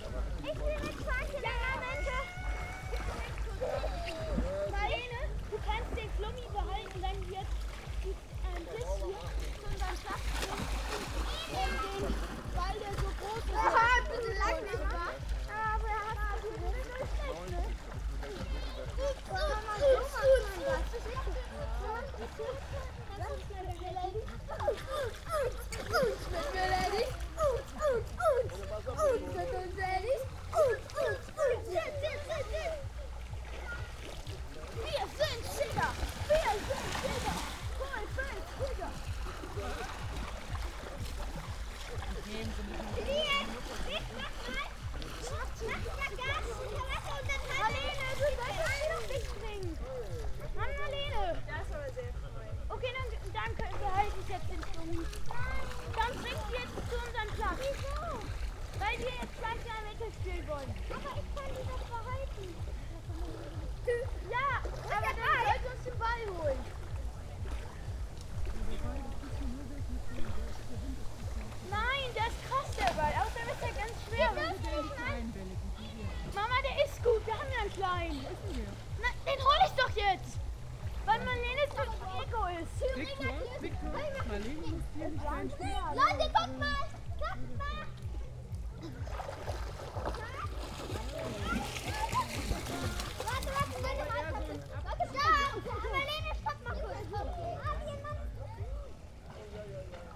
Am Berge, Bestensee, Deutschland - bathing place
Bestensee, Pätzer Hintersee, lake ambience with kids at the bathing place
(Sony PCM D50, Primo EM172)